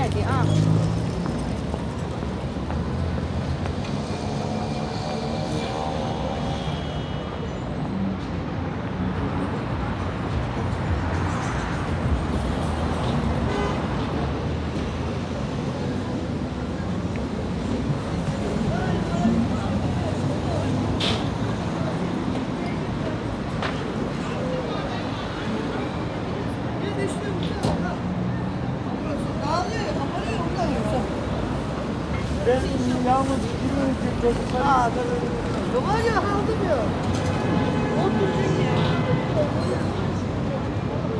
Neukölln, Berlin, Deutschland - Berlin. Hermannplatz
Standort: Nördliches Ende des Hermannplatzes (Sonnenallee). Blick Richtung Nordwest.
Kurzbeschreibung: Passantengespräche, dichter Verkehr, Musik aus Autoradios, Trillerpfeife eines politischen Aktivisten auf dem Fahrrad.
Field Recording für die Publikation von Gerhard Paul, Ralph Schock (Hg.) (2013): Sound des Jahrhunderts. Geräusche, Töne, Stimmen - 1889 bis heute (Buch, DVD). Bonn: Bundeszentrale für politische Bildung. ISBN: 978-3-8389-7096-7
Berlin, Germany, 27 April 2012